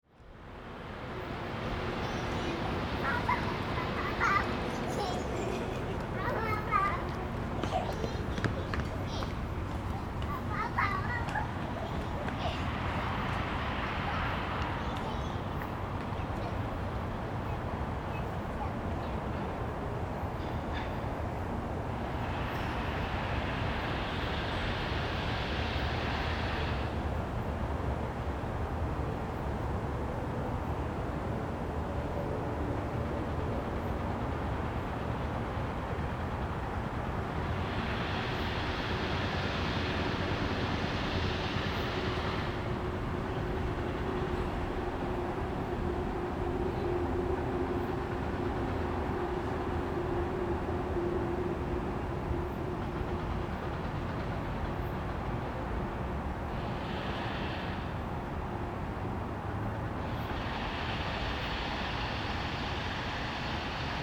Xindian District, New Taipei City - Small woods
Small woods, Visitor, Traffic noise, Construction noise
Zoom H4n +Rode NT4
Xindian District, New Taipei City, Taiwan, 20 December 2011